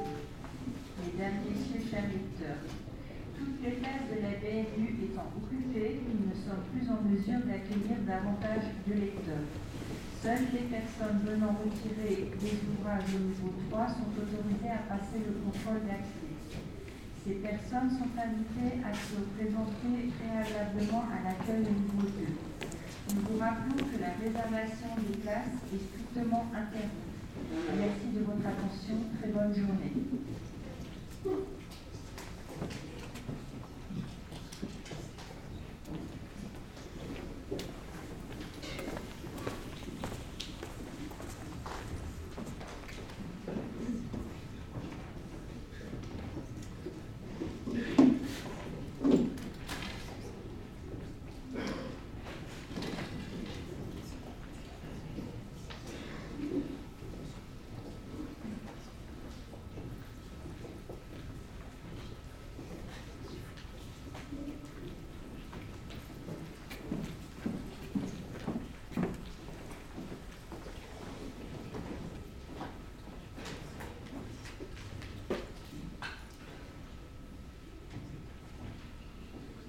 Place de la République, Strasbourg, Frankreich - bnu library
library, reading room, near central staircase, steps, announcement: "bibliotek is overcrowded".
France métropolitaine, France, 2 January 2020, 14:30